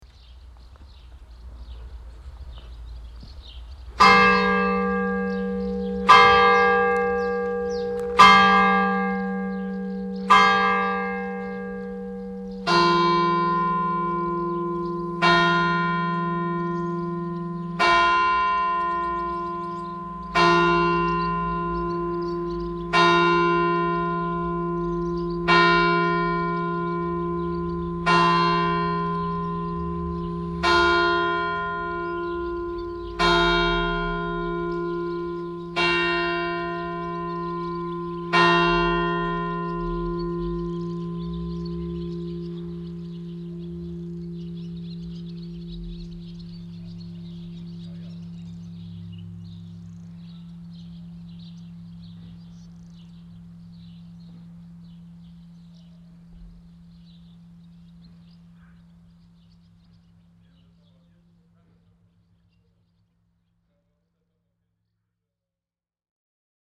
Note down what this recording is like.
An der Dorfkirche. Der Klang der 11 Uhr Glocken. At the church of the village. The sound of the 11 o clock bells.